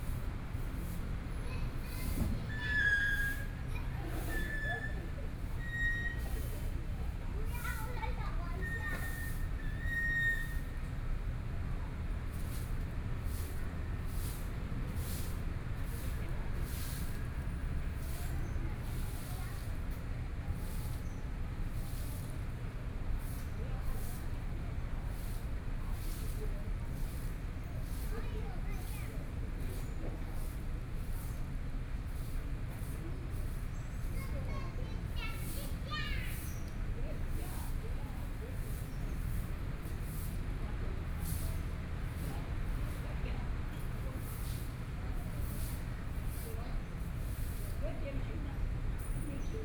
XinXi Park, Taipei City - Children and the elderly
In the park, Children and the elderly, Environmental sounds, Traffic Sound
Please turn up the volume a little
Binaural recordings, Sony PCM D100 + Soundman OKM II